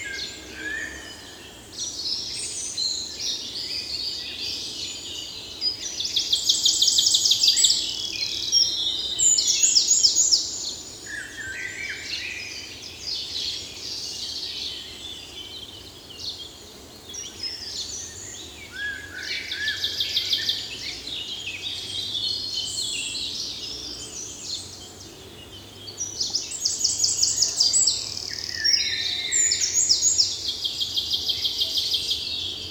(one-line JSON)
{"title": "Thuin, Belgique - Birds in the forest", "date": "2018-06-03 11:20:00", "description": "Common Chaffinch solo, European Robin, Eurasian Blackcap, a solitary Sparrow.", "latitude": "50.37", "longitude": "4.36", "altitude": "210", "timezone": "Europe/Brussels"}